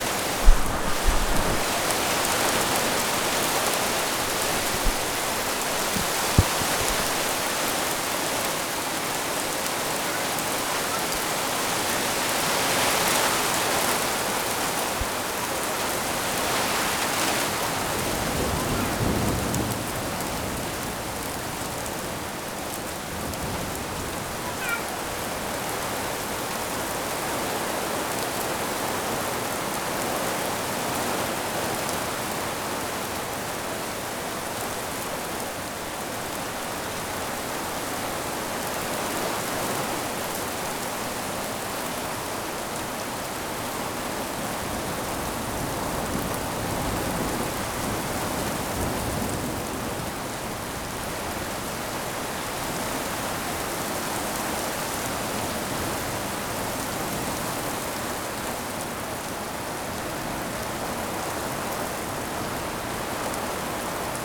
Innstraße, Innsbruck, Österreich - Blitz&Donner im Waltherpark
Gewitter, Starkregen, vogelweide, waltherpark, st. Nikolaus, mariahilf, innsbruck, stadtpotentiale 2017, bird lab, mapping waltherpark realities, kulturverein vogelweide
Innsbruck, Austria, 6 June 2017, 16:56